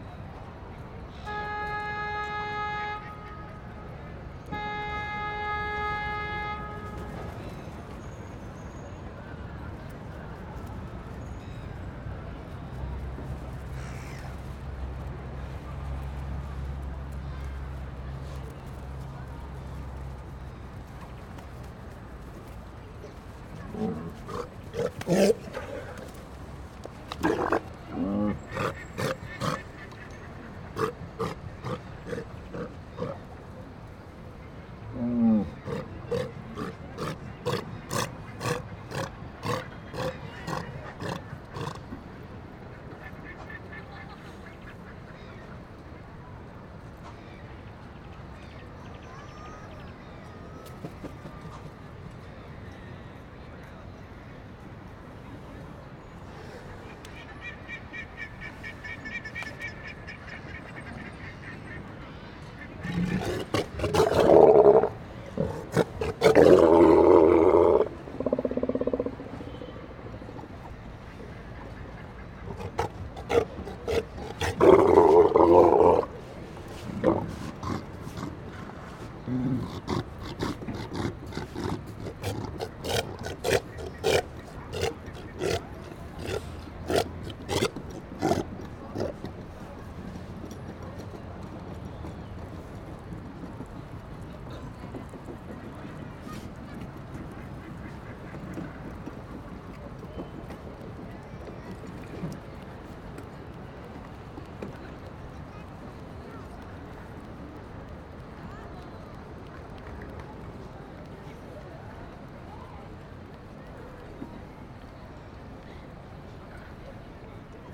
Yungay, Valdivia, Los Ríos, Chili - AMB VALDIVIA HARBOUR LARGE SEA LIONS BIRDS BOAT WET TRAFFIC FAR MS MKH MATRICED
This is a recording of the harbour located in Valdivia. I used Sennheiser MS microphones (MKH8050 MKH30) and a Sound Devices 633.